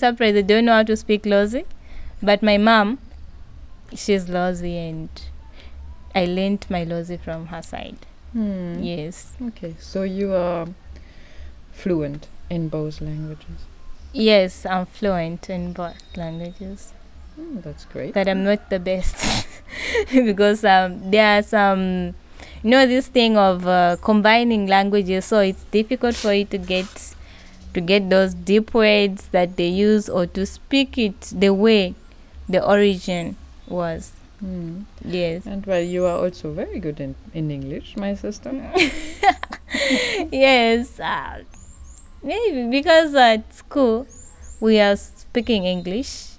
Sinazongwe Primary School, Sinazongwe, Zambia - Im Patience Kabuku at Zongwe FM...
We are sitting together with Patience Kabuku in the shade of one of the doorways to a classroom at Sinazonwe Primary School. The door to Zongwe FM studio is just across the yard from us. It’s Saturday afternoon; you’ll hear the singing from church congregations somewhere nearby. A match at the football pitch is due; occasionally, a motorcycle-taxi crosses the school grounds and interrupts our conversation for a moment. Patience is one of the youth volunteers at Zongwe FM community radio. After completing her secondary schooling in 2014, she started joining the activities at Zongwe, she tells us....
The recording forms part of THE WOMEN SING AT BOTH SIDES OF THE ZAMBEZI, an audio archive of life-story-telling by African women.